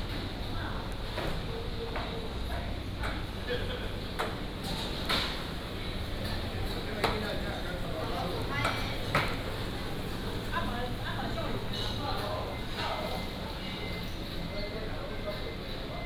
文華市場, Tainan City - Walking in the market
Walking in the market, Traffic sound
South District, Tainan City, Taiwan